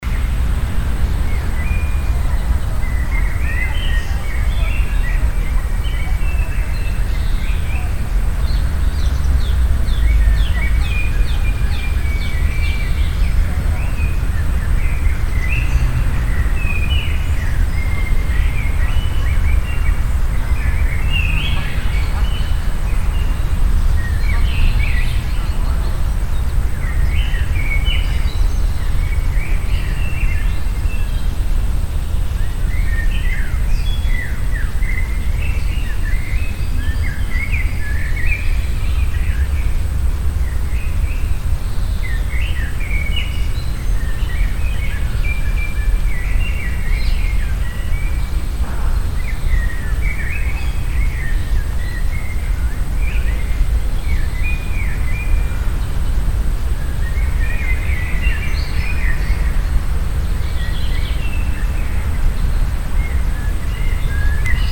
{"title": "cologne, stadtgarten, soundmap, grosse wiese, mitte - cologne, stadtgarten, soundmap, grosse wiese", "date": "2008-04-22 13:32:00", "description": "stereofeldaufnahmen im september 07 mittags\nproject: klang raum garten/ sound in public spaces - in & outdoor nearfield recordings", "latitude": "50.94", "longitude": "6.94", "altitude": "55", "timezone": "Europe/Berlin"}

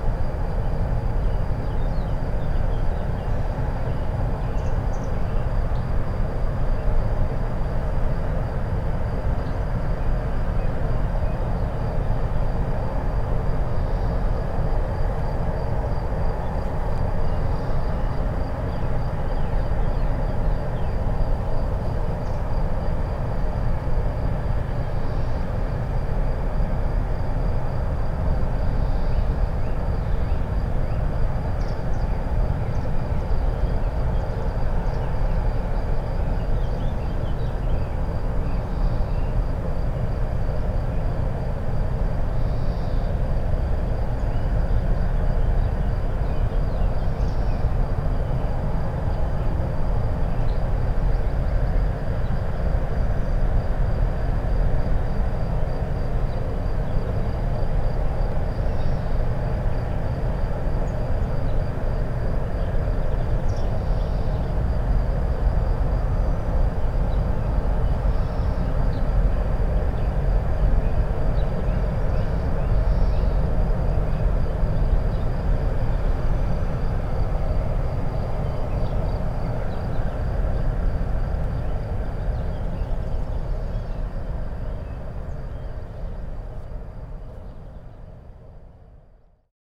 {"title": "Kidricevo, Slovenia - disused factory resonance from outside", "date": "2012-06-18 19:46:00", "description": "this recording is made just a few meters from 'factory resonance 2', but from outside the factory walls.", "latitude": "46.39", "longitude": "15.79", "altitude": "239", "timezone": "Europe/Ljubljana"}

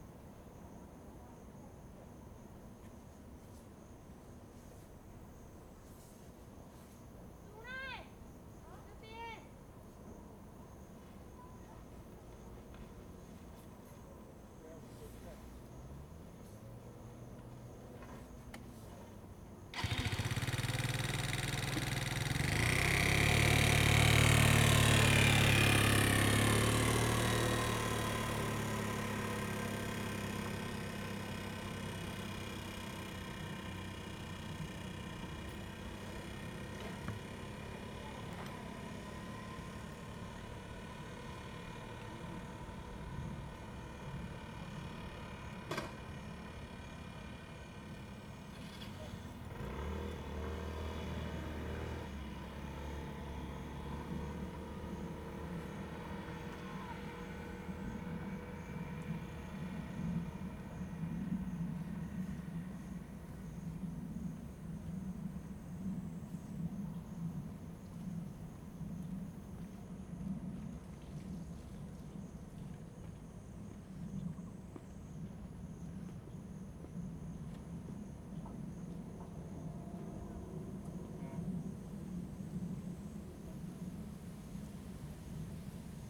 {"title": "都歷遊客中心, Chenggong Township - In the parking lot", "date": "2014-09-06 16:45:00", "description": "In the parking lot\nZoom H2n MS+ XY", "latitude": "23.02", "longitude": "121.32", "altitude": "65", "timezone": "Asia/Taipei"}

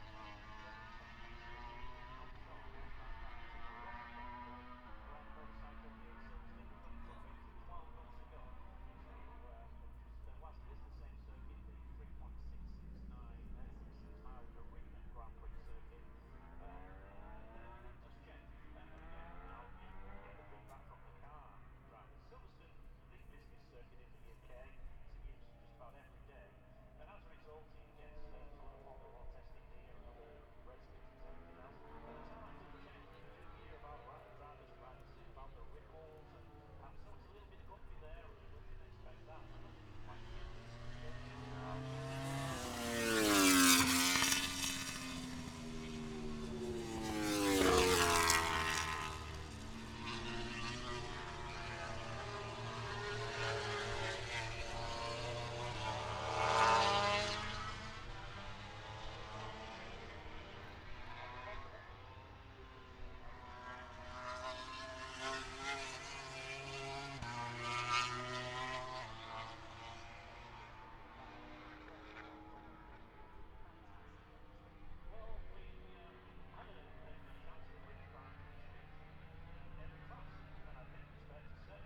{"title": "Silverstone, UK - british motorcycle grand prix 2016 ... moto grand prix ...", "date": "2016-09-02 14:05:00", "description": "moto grand prix free practice two ... Maggotts ... Silverstone ... open lavalier mics on T bar strapped to sandwich box on collapsible chair ... windy grey afternoon ...", "latitude": "52.07", "longitude": "-1.01", "timezone": "Europe/London"}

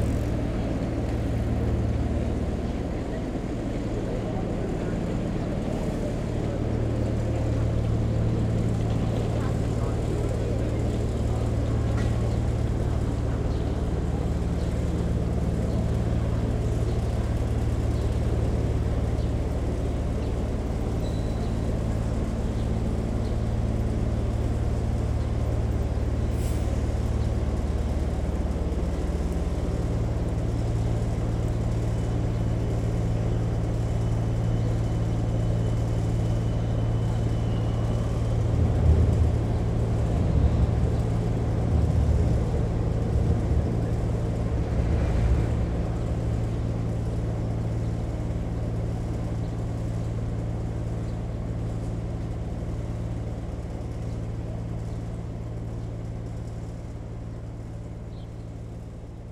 {"title": "Willy-Brandt-Platz, Erfurt, Deutschland - Erfurt Main Station Forecourt 2", "date": "2020-07-16 08:38:00", "description": "*Recording in AB Stereophony.\nEvolving day`s activity: Scattered whispers, approaching trolley wheels on paved floor, people, speeding bus and tram engines and bike freewheeling, subtle birds, drones of cargo engine at close range.\nThe space is wide and feels wide. It is the main arrival and transit point in Thuringia`s capital city of Erfurt. Outdoor cafes can be found here.\nRecording and monitoring gear: Zoom F4 Field Recorder, RODE M5 MP, Beyerdynamic DT 770 PRO/ DT 1990 PRO.", "latitude": "50.97", "longitude": "11.04", "altitude": "199", "timezone": "Europe/Berlin"}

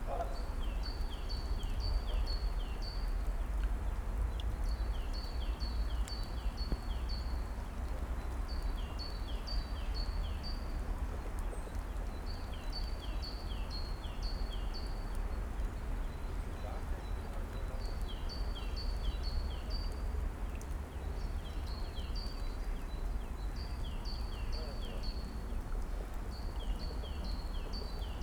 {"title": "Schloßpark Buch, Berlin - park ambience, late winter afternoon", "date": "2019-02-02 16:15:00", "description": "Schloßpark Buch, park ambience, ducks, tits, woodpecker, traffic\n(Sony PCM D50, DPA4060)", "latitude": "52.64", "longitude": "13.50", "altitude": "56", "timezone": "Europe/Berlin"}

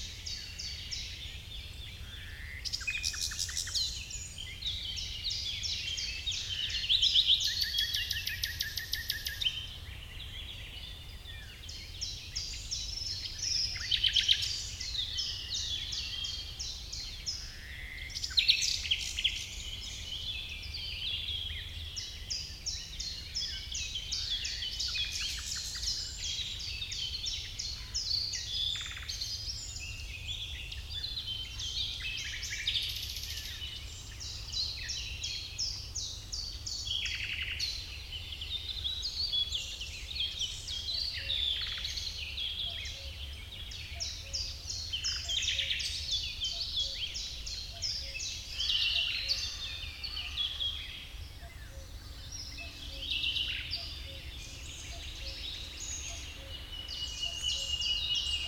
{"title": "Chindrieux, France - Rossignol et pouillot véloce.", "date": "2003-05-24 08:00:00", "description": "Au coeur de la forêt de Chautagne le matin, rossignol, pouillot véloce, faisan..... enregistreur DAT DAP1 Tascam, extrait d'un CDR gravé en 2003.", "latitude": "45.81", "longitude": "5.84", "altitude": "234", "timezone": "Europe/Paris"}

